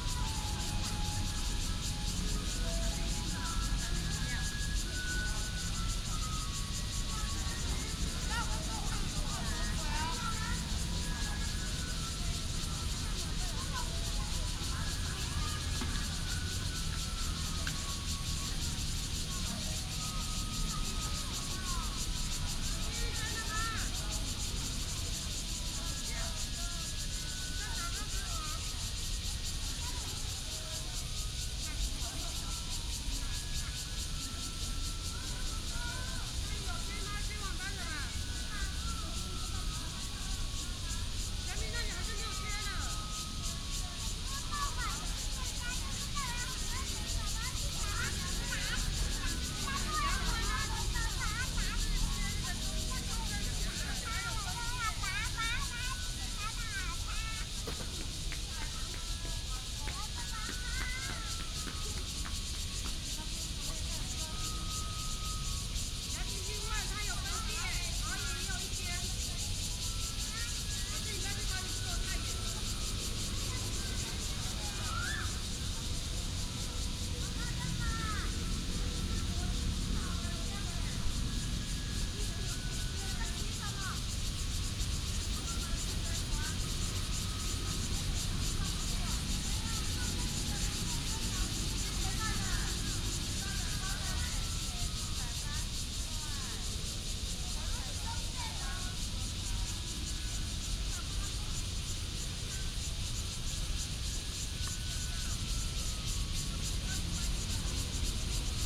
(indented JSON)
{
  "title": "常樂公園, Zhongli Dist., Taoyuan City - in the Park",
  "date": "2017-08-18 18:10:00",
  "description": "in the Park, traffic sound, Cicadas, Garbage clearance time, Binaural recordings, Sony PCM D100+ Soundman OKM II",
  "latitude": "24.98",
  "longitude": "121.25",
  "altitude": "121",
  "timezone": "Asia/Taipei"
}